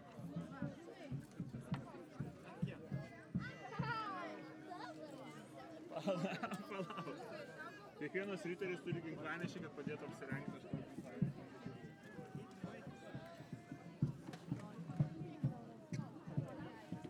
Lithuania, Kernave, Festival of Experimental Archaeology
18th International Festival of Experimental Archaeology „DAYS OF LIVE ARCHAEOLOGY IN KERNAVĖ“, walk through the site